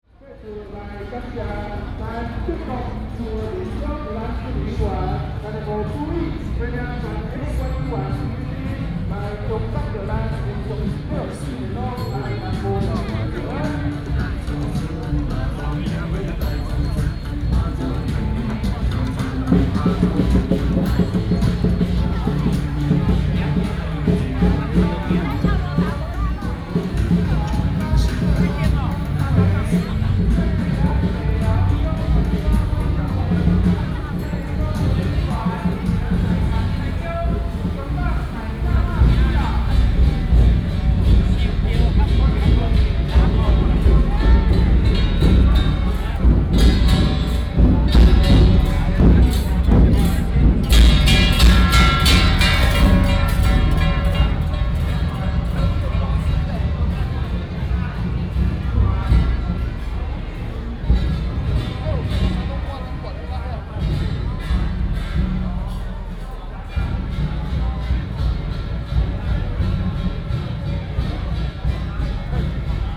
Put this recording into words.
temple fair, Walking on the road